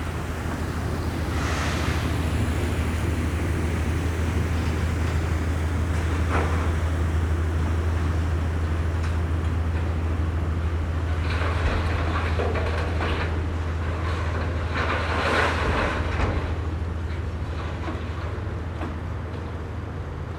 Lorries being loaded with heavy metal scrap on a cold day as twilight falls. A heavily laden coal barge passes by.